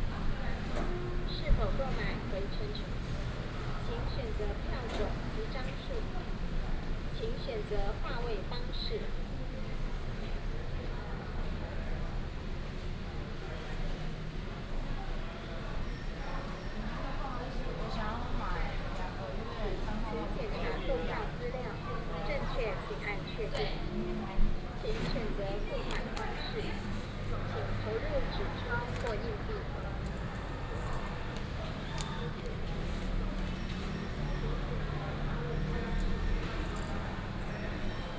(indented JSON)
{
  "title": "彰化車站, Taiwan - walking in the Station",
  "date": "2017-03-01 08:20:00",
  "description": "From the station platform, Through the flyover, To the station exit, Use vending machines in the lobby",
  "latitude": "24.08",
  "longitude": "120.54",
  "altitude": "16",
  "timezone": "Asia/Taipei"
}